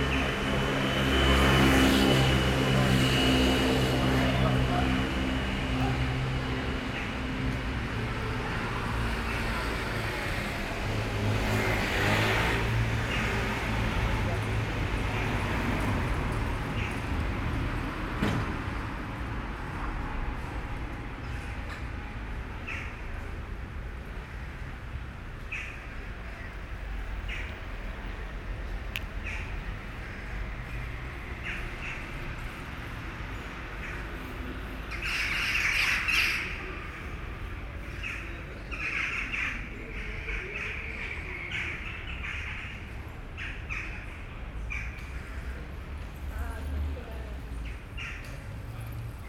Paviljoensgracht Den Haag, Netherlands - Evening traffic
Cars, bikes, voices, birds moving along the "gracht" Binaural Soundman mics.
Zuid-Holland, Nederland